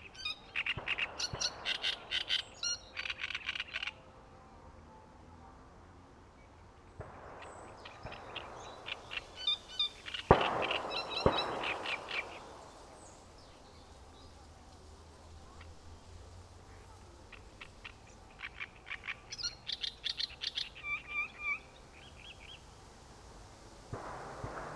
aleja Spacerowa, Siemianowice Śląskie - Great reed warbler
listening to a Great reed warbler at a patch of dense reed
(Sony PCM D50)